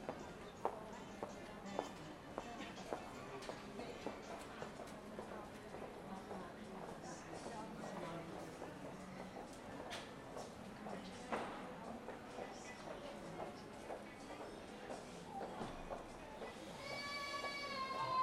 Hoog-Catharijne CS en Leidseveer, Utrecht, Niederlande - passage muzak
walking left from the entrance a rather silent passage if there were not the music playing